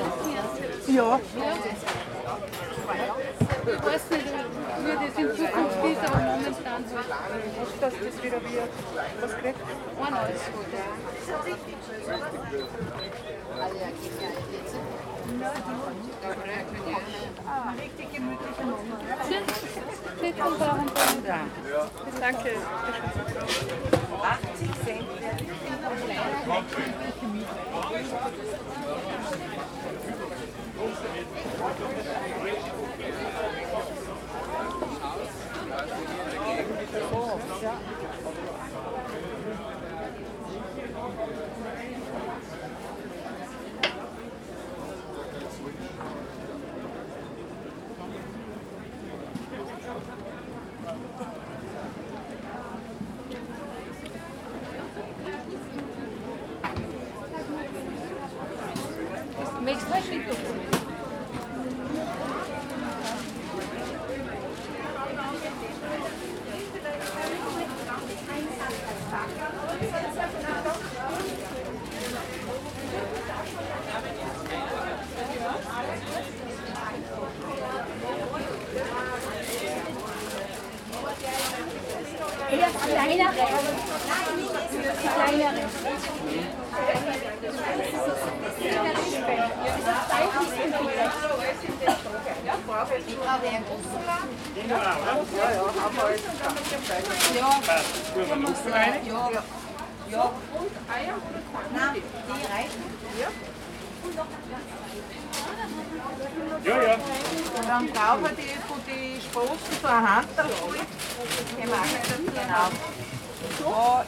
Faberstraße, Salzburg, Österreich - Schranne Salzburg 1
Wochenmarkt in Salzburg, jeden Donnerstag. Weekly market in Salzburg, every Thursday
8 July 2021, 09:45